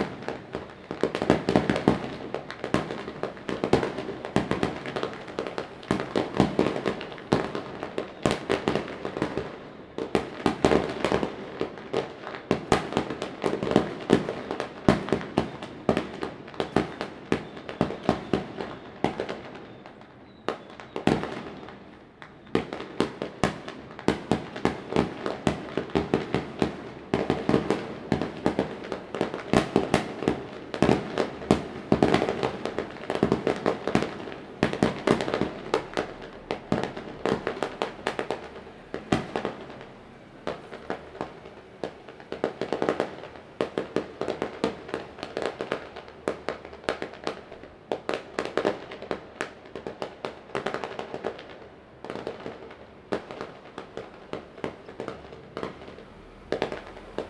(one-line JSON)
{"title": "Daren St., Tamsui District - Firecrackers and fireworks", "date": "2015-04-19 14:30:00", "description": "Firecrackers and fireworks\nZoom H2n MS +XY", "latitude": "25.18", "longitude": "121.44", "altitude": "45", "timezone": "Asia/Taipei"}